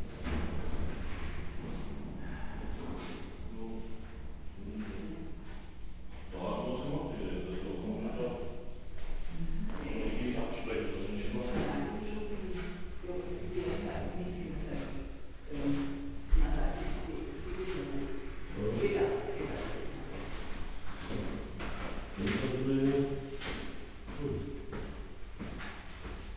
Müggelturm - inside tower ambience, singer
place revisited on an gray autumn Sunday afternoon. A singer performs a few steps below.
(Sony PCM D50, Primo EM172)
Berlin Köpenick